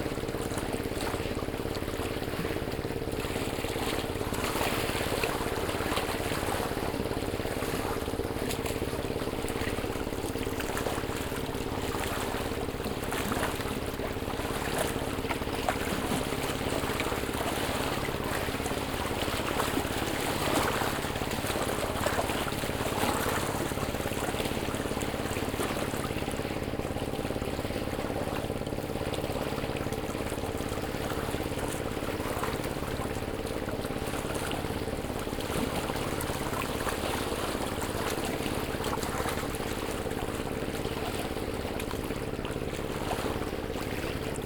Lake shore, Kariba Lake, Sinazongwe, Zambia - rigs moving out on the lake for the night...
Kapenta fishing is big business at Kariba lake; for three weeks every months, the lake is filled with kapenta rigs fishing; in fact, from far away, a newcomer may think there's a big city out there in the dark; only one week over the full moon, there's quite; fishing is not permitted; each rig has at least two generators running, one to lift the net the other for movement of the rig...
Southern Province, Zambia, 7 July 2018, 17:32